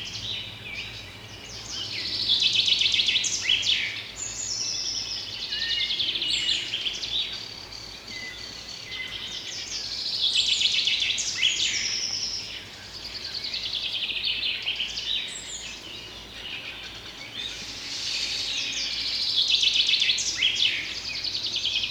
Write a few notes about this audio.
Small island on the Volga river. Massive mosquitos attack. Birds singing contest. Frogs and reptiles moving in the grass. Recorded with Tereza Mic System - Zoom F6